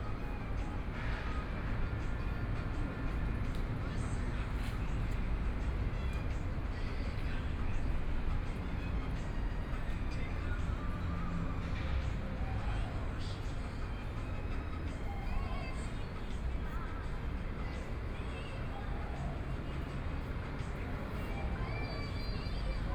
Sitting in the park, Far from the construction site noise, Birds singing
Sony PCM D50+ Soundman OKM II
Zhongshan District, Taipei City, Taiwan, 27 April 2014